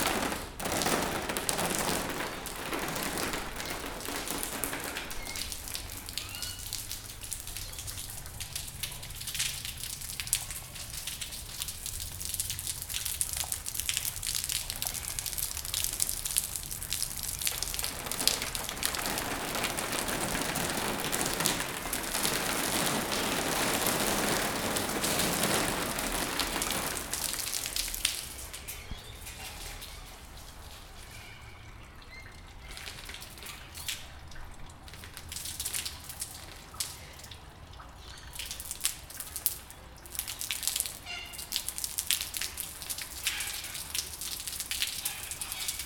Water falling from the building onto strictly one car. Recorded with zoom pro mic
New South Wales, Australia, 10 July 2020, 13:01